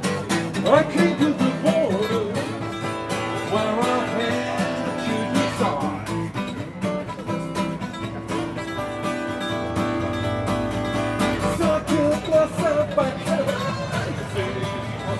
Linden-Nord, Hannover, Deutschland - Nachtleben auf der Limmerstraße
Nachtleben auf der Limmerstraße in Hannover Linden-Nord, aufgenommen von Hörspiel Ad Hoc, Situation: Leute feiern und genießen die Sommernacht, Jemand hat Geburtstag, ein Straßenmusiker taucht auf und spielt ein Ständchen, Aufnahmetechnik: Zoom H4n
Hannover, Germany, 12 July 2016